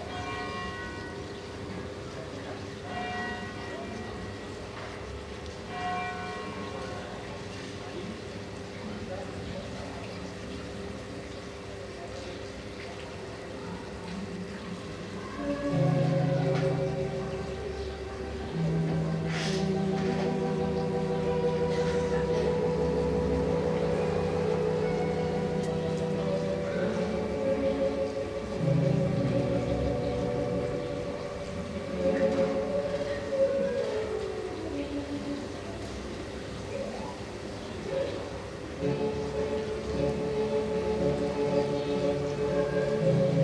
Saint-Paul-Trois-Châteaux, France - Neighbour with two chords
Neighbour playing organ, trucks, children, birds.
Sony MS microphone. DAT recorder.